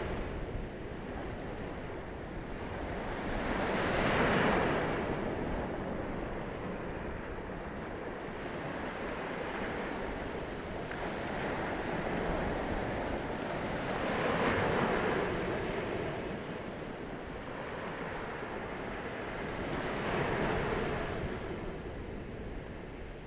Longboat Key Beach, Longboat Key, Florida, USA - Longboat Key Beach